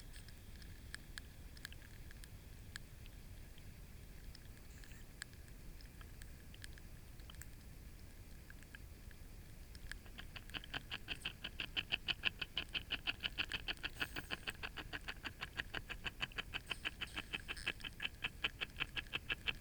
Jens' Place, Rogovo Rema, Mikro Papingo - Tadpoles
I'd be fascinated to know what is going on here. Recorded with a hydrophone I can hear tadpoles nibbling from a spawn covered branch and tail twitching, but I can't place the sounds which appear to be air expulsion. It was a glorious couple of hours and this clip is just a short example. I can also hear audible signals of cicadas and frogs vocalising.
Papigko, Greece, 18 July 2017